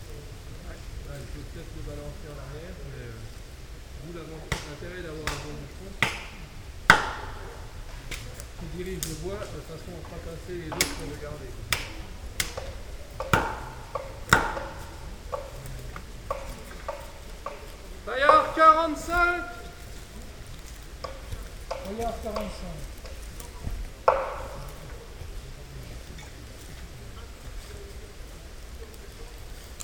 Dans le cadre de l’appel à projet culturel du Parc naturel régional des Ballons des Vosges “Mon village et l’artiste”.